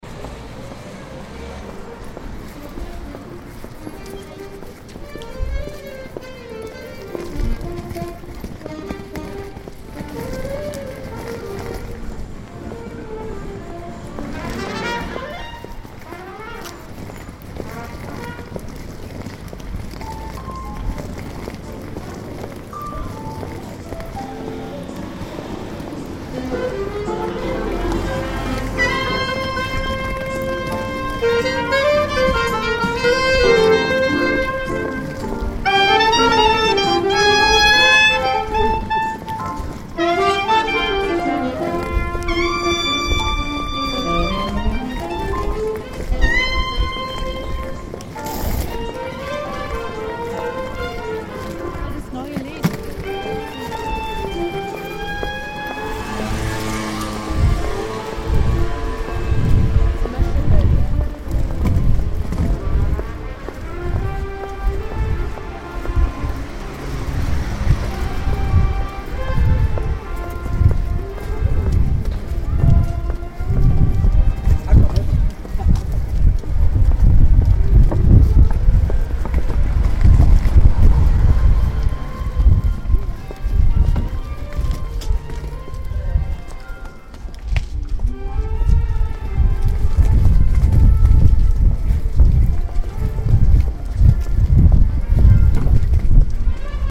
Street Music, Rue de la Rouquette, Paris